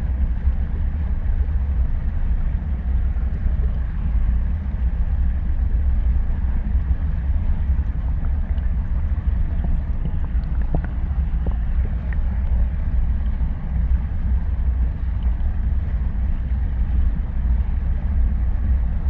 Utena, Lithuania, tiny ice drone
I have alreadyrecorded on this spot several times. But now there's newly built road above and some large pipe under it. The stinky waters flows through it and forms kind of little waterfall covered with ice. I placed a pair contact mics on this tiny ice...